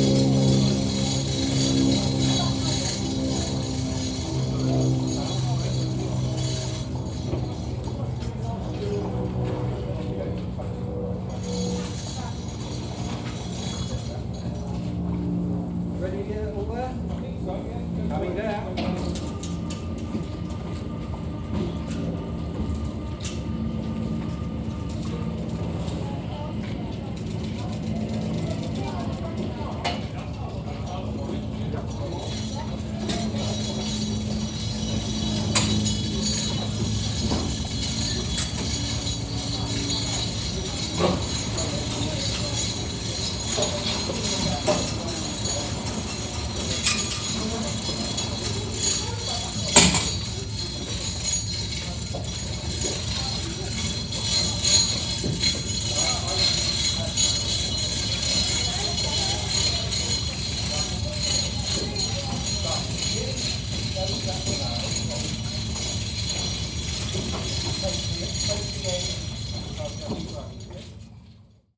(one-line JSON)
{"title": "Gravesend Marina, UK - Boat Lift at Gravesend Marina", "date": "2021-06-12 17:00:00", "description": "Sailing boat hoist lifting boats from River Thames into Gravesend Sailing Club at low tide.", "latitude": "51.44", "longitude": "0.38", "altitude": "4", "timezone": "Europe/London"}